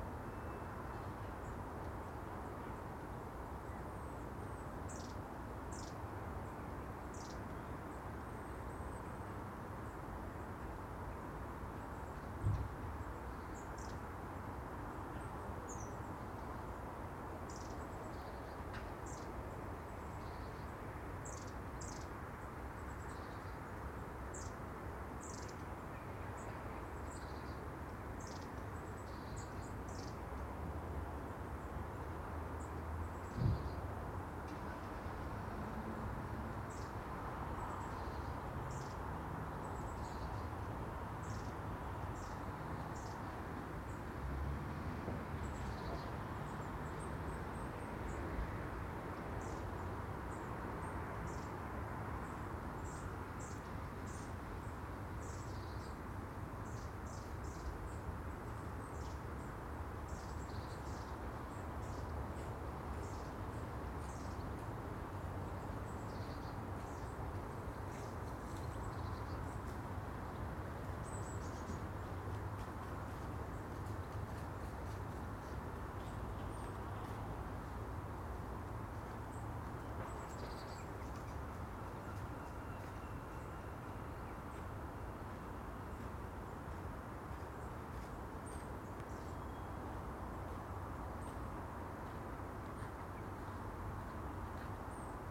Contención Island Day 6 inner northeast - Walking to the sounds of Contención Island Day 6 Sunday January 10th
The Drive Moor Crescent Moorfield Lodore Road
Stood back from the edge of open grass
still
I am not obvious
a dog noses by then sees me
and freezes
then barks
to be chastised by its owner
England, United Kingdom, January 2021